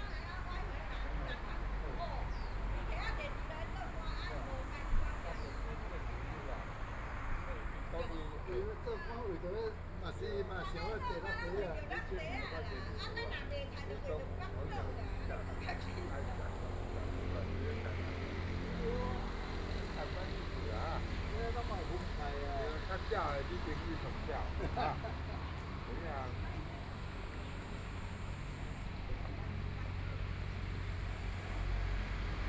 Birdsong, Morning at the park entrance, Traffic Sound
Binaural recordings